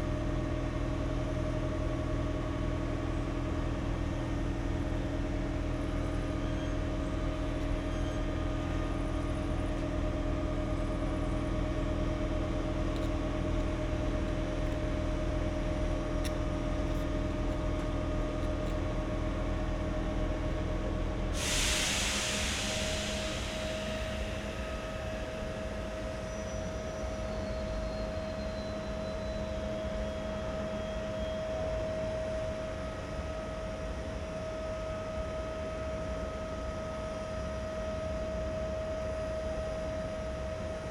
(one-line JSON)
{"title": "Spielfeld, Strass, Steiermark - station ambience, waiting", "date": "2012-07-30 17:00:00", "description": "ideling continued... strolling around, contemplating on the remains of former activity: restaurants, a bistro, other buildings of unclear purpose, all abandoned, melancholic perception. distant churchbells later.\n(SD702 Audio Technica BP4025)", "latitude": "46.71", "longitude": "15.63", "altitude": "260", "timezone": "Europe/Vienna"}